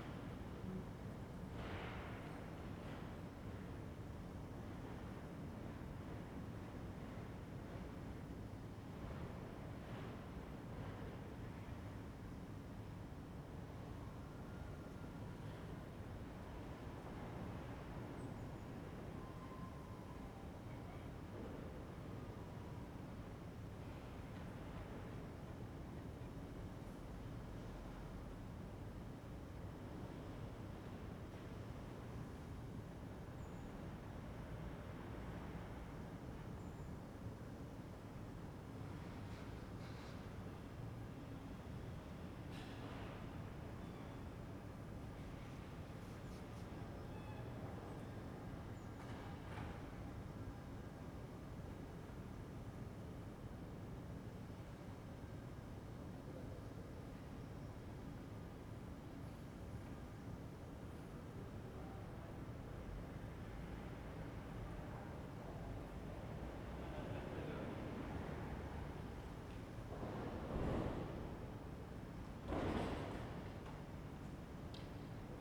Ascolto il tuo cuore, città. I listen to your heart, city. Several chapters **SCROLL DOWN FOR ALL RECORDINGS** - Terrace late December round 2 p.m. and barking Lucy in the time of COVID19
"Terrace late December round 2 p.m. and barking Lucy in the time of COVID19" Soundscape
Chapter CLXXXIII of Ascolto il tuo cuore, città. I listen to your heart, city
Tuesday December 28th 2021. Fixed position on an internal terrace at San Salvario district Turin, About one year and four months after emergency disposition due to the epidemic of COVID19.
Start at 2:20 p.m. end at 2:57 p.m. duration of recording 36'55''.